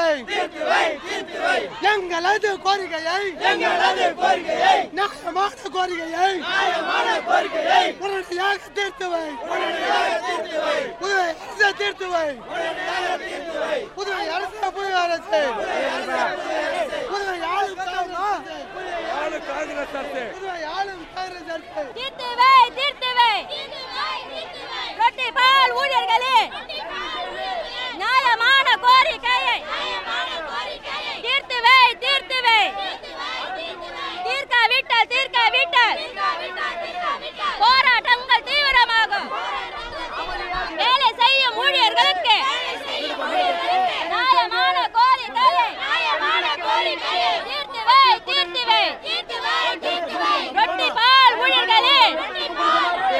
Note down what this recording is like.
Mahatma Gandhi Road - Pondicherry, Manifestation, Ambiance